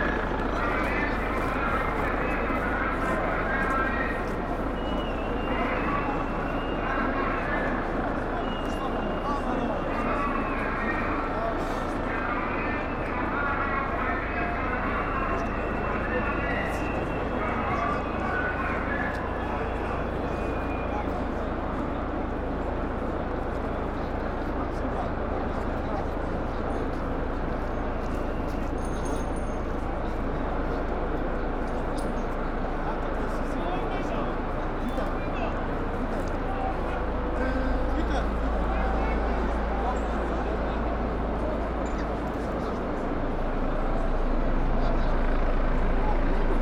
demonstrations, police chopper, with megaphone calling to people not to violate public order laws